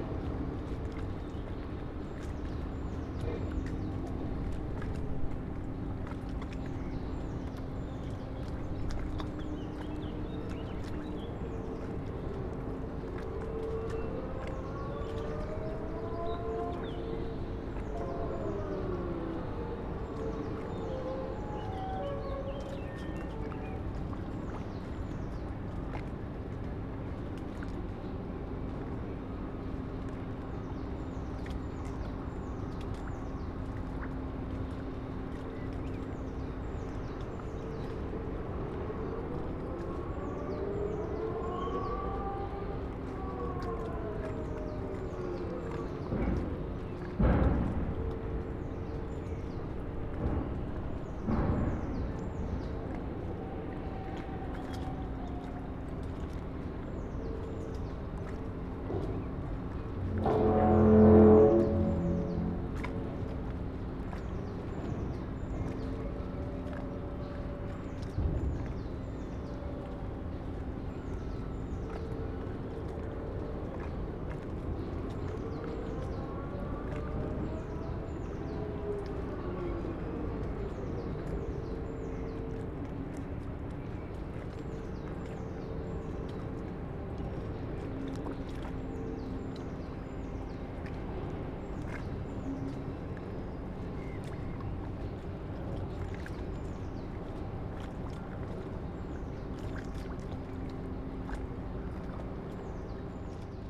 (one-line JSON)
{"title": "Berlin, Plänterwald, Spree - Saturday afternoon ambience", "date": "2017-05-06 16:40:00", "description": "place revisited. loading and shunting going on at the concrete factory and the power plant. mics placed near the surface of the water\n(SD702, S502ORTF)", "latitude": "52.49", "longitude": "13.49", "altitude": "23", "timezone": "Europe/Berlin"}